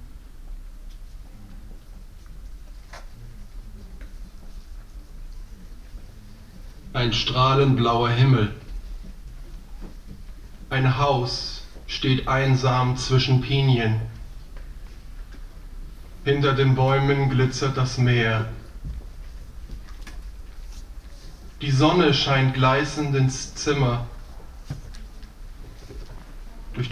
kinovorführung an der Kunsthochschule für Medien (KHM) originalton- ausschnitt
soundmap nrw: social ambiences/ listen to the people - in & outdoor nearfield recordings
filzengraben, khm, cinema, 17 July